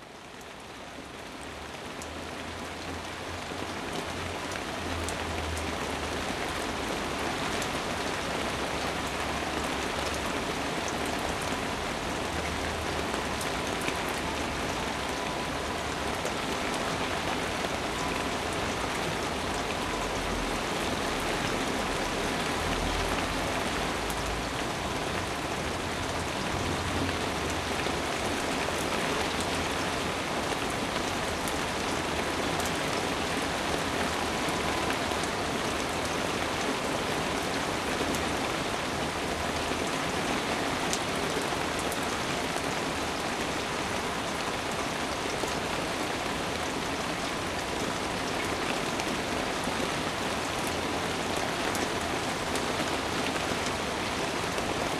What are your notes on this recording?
Lyon, Rue Neyret, on a rainy day, Tech Note : Sony ECM-MS907 -> Minidisc recording.